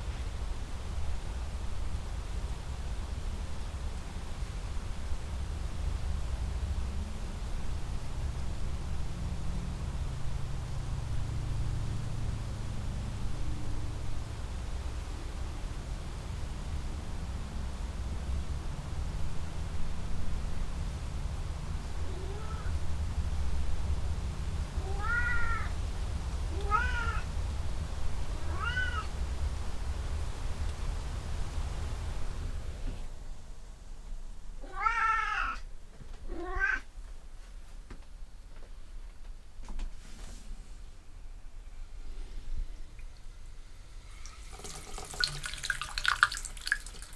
Three Pines Rd., Bear Lake, MI, USA - Tap Water, Gonzaga & the Lake (WLD2015)
Distant motor boats and jetskis heard through the window screen, as Gonzaga, the tuxedo cat, demands the bathtub's faucet be turned on for a drink. Stereo mic (Audio-Technica, AT-822), recorded via Sony MD (MZ-NF810).